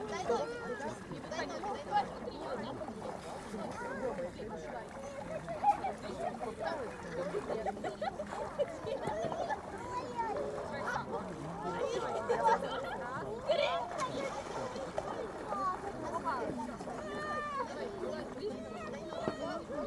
вулиця Гонти, Вінниця, Вінницька область, Україна - Alley 12,7sound4beachnearthewater
Ukraine / Vinnytsia / project Alley 12,7 / sound #4 / beach near the water
27 June, ~11:00